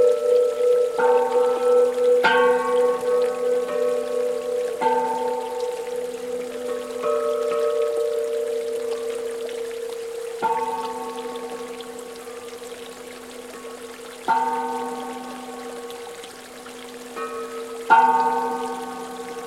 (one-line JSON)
{"title": "Utena, Lithuania - zen meditation bell", "date": "2016-05-13 08:00:00", "description": "zen meditation bell", "latitude": "55.51", "longitude": "25.63", "altitude": "118", "timezone": "Europe/Vilnius"}